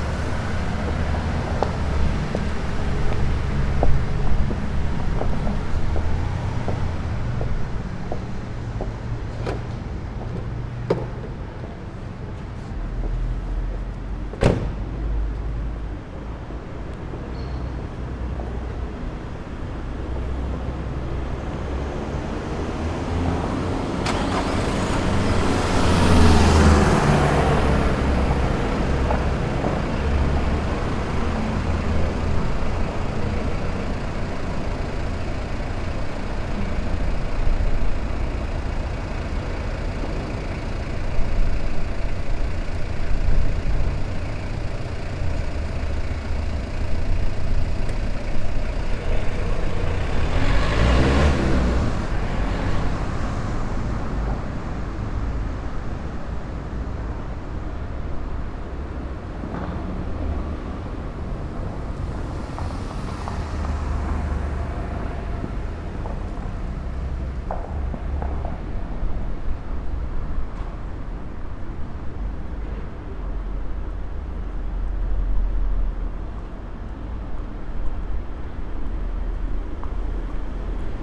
Collégiale Saint-Martin, Angers, France - (607) Church square before noon
Church square before noon; ORTF recording.
recorded with Sony D100
sound posted by Katarzyna Trzeciak
France métropolitaine, France, 23 August 2019, ~12:00